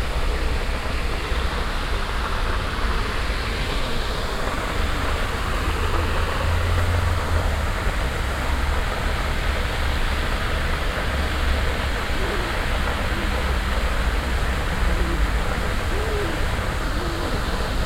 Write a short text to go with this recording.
Under a tree nearby the artificial lake, a group of pidgeons and the permanent white noise of a water fountain on the lake. In the end pigeons flying away in small groups. Projekt - Klangpromenade Essen - topographic field recordings and social ambiences